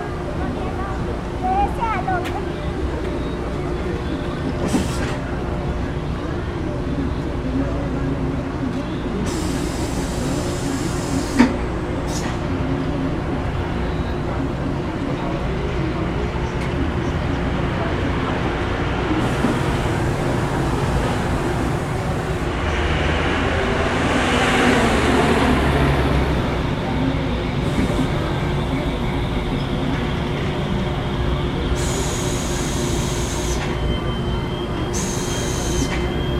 Cl., Bogotá, Colombia - Laundry, Gilmar Neighborhood
moderately noisy soundscape. This neighborhood of the city is busy and there is a great variety of stores, the sound of the steam machine of the laundry is very outstanding, there is the presence of some cars, and children's voices.